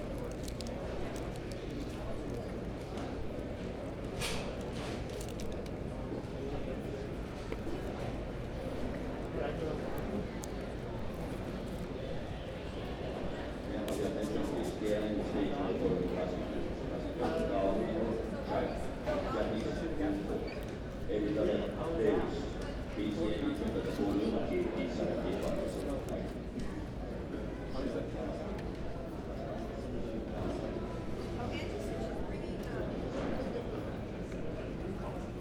neoscenes: Vancouver airport waiting hall
BC, Canada, 4 February 2007